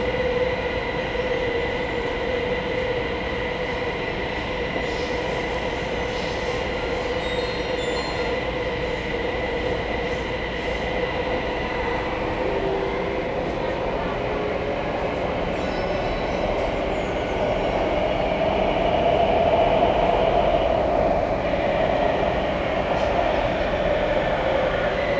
Cianjhen, Kaohsiung - Take the MRT